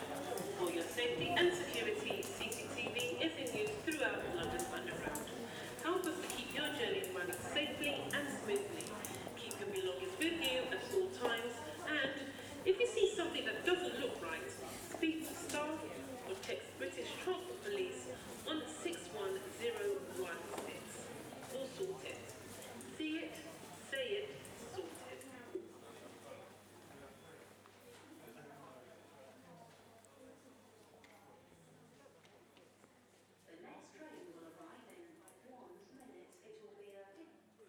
Victoria St, London, Vereinigtes Königreich - London - Victoria Station - subway
At the subway in London Victoria Station - steps, people, trains arriving and leaving - automatic announcement "mind the gap"
soundmap international:
social ambiences, topographic field recordings